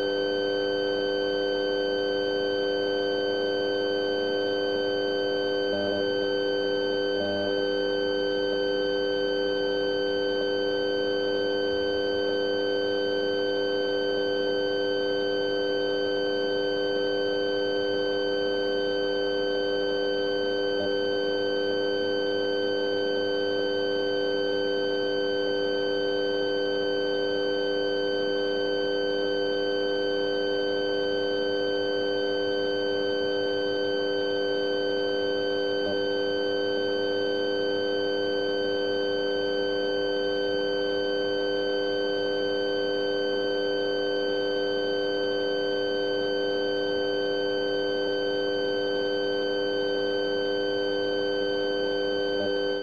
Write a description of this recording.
lo-fi sound of a tiny emergency speaker in the elevator. the buzz is not audible for a regular occupant of the elevator. one has to be curious and put their ear close to the speaker in order to listen to the sound and find out about its properties.